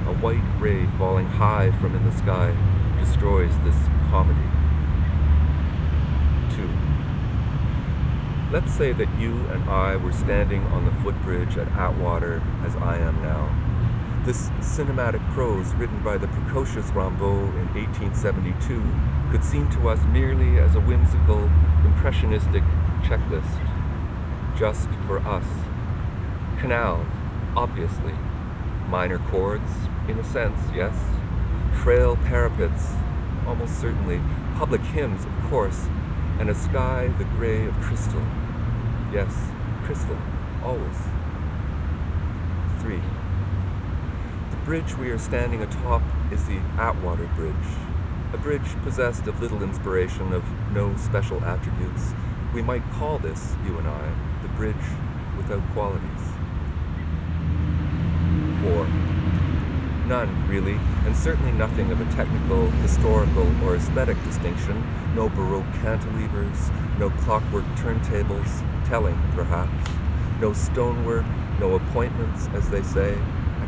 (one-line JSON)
{"title": "Montreal: Lachine Canal: Footbridge at Atwater - Lachine Canal: Footbridge at Atwater", "date": "2007-09-29", "description": "Soundscape of Atwater bridge area with text about ecological history of the area read by Peter C. van Wyck.", "latitude": "45.48", "longitude": "-73.57", "altitude": "12", "timezone": "America/Montreal"}